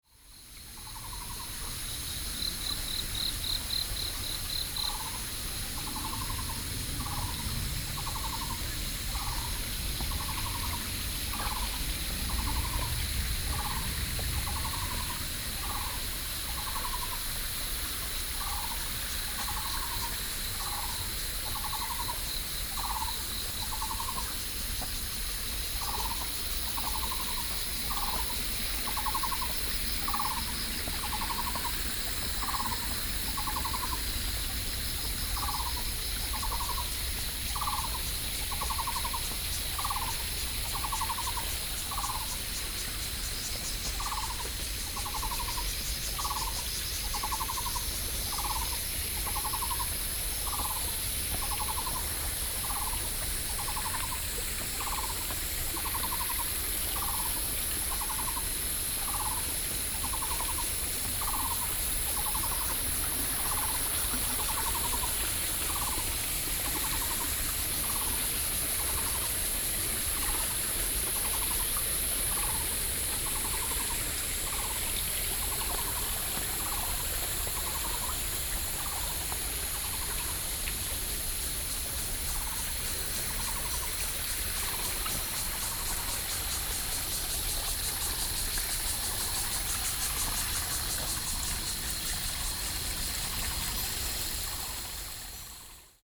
Trail, Stream, Cicadas, Frogs calling, Sony PCM D50 + Soundman OKM II
新北市 (New Taipei City), 中華民國